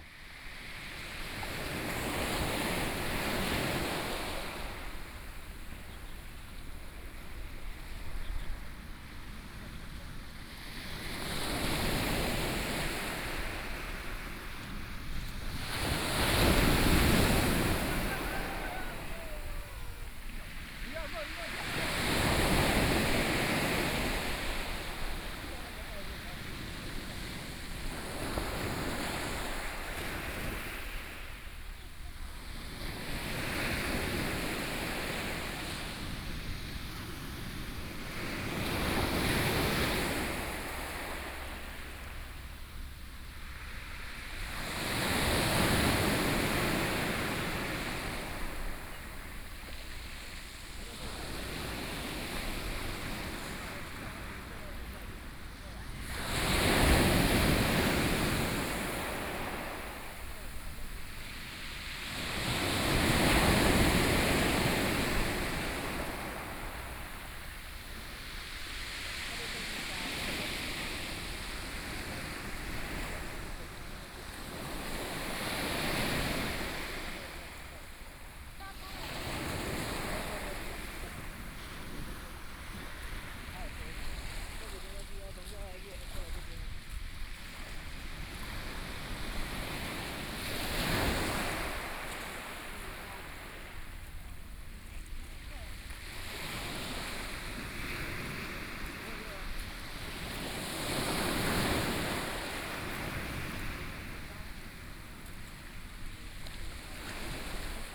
內埤灣海濱公園, Su'ao Township - the waves
At the beach, Sound of the waves
Suao Township, Yilan County, Taiwan, 28 July 2014, 15:23